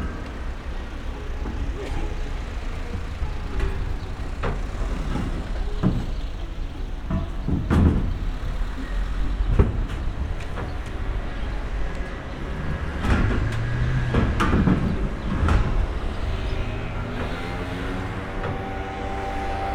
Berlin: Vermessungspunkt Friedelstraße / Maybachufer - Klangvermessung Kreuzkölln ::: 29.05.2012 ::: 13:09
Berlin, Germany, 29 May 2012, 13:09